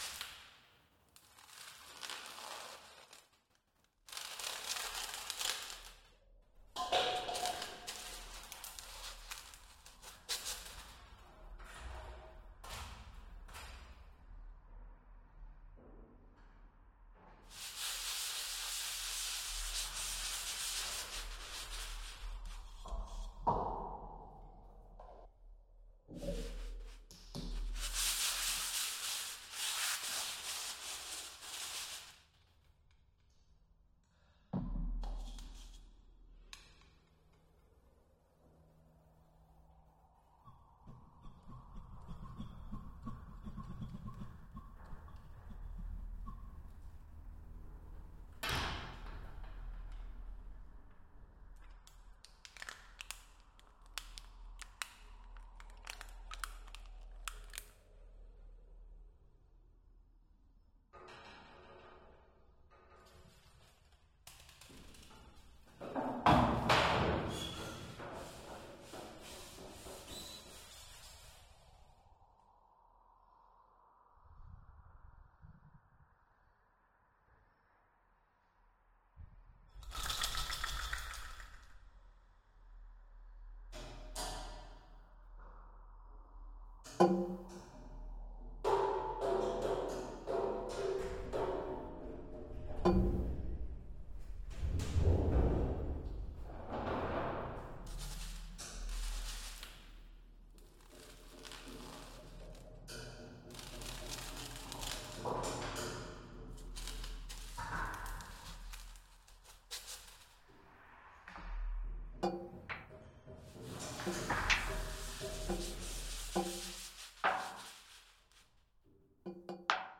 Middelheim outdoor contemporary sculpture museum, Antwerp, Belgium - The Orbino (Geografie der lage landen)
The recordings were made inside the container of Luc Deleu “a permanent collection of the outdoor contemporary sculpture museum Middelheim” with three friends. Banging, rubbing foam on the walls, throwing different stuff. The outdoor background rumble of the motorway in the proximity of the park was filter out with synthesizer like effect into the mix.I’ve used a zoom H2n as my laptop with QUAD-CAPTURE USB Audio Interface. 2 Single cardioïde microphones in a XY setting.
Separate recording of the object used in the room where made as a Impulse response convolution recording of the reverberation of the container. Ending the day with an amazing percussion Jam.
The weather condition whas sunny. I like the Middelheim museum for Its lanes around the park. You get an idea of the residential building in the suburbs after the First World War. English cottages stand alongside modernist houses, a 'petit palais' next to a contemporary villa.
7 November 2013